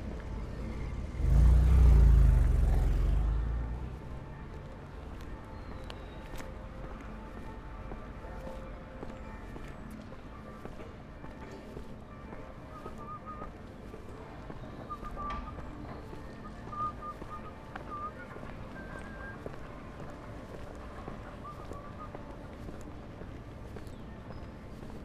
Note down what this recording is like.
Churchbells ringing, a helicopter flying above, people are sitting on the lawn in front of the University of Bonn, chatting, drinking their first spring beer, playing guitar, wearing t-shirts. I walk towards the Biergarten packed with people who ssem to have switched immedeately from winter to spring/summer mood.